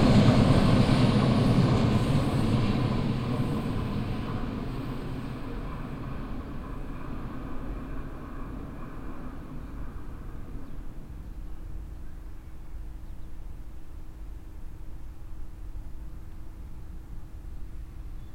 An intense knot point of railway traffic. trams and different types of trains passing by in different speeds.
soundmap nrw - social ambiences and topographic field recordings
22 September 2009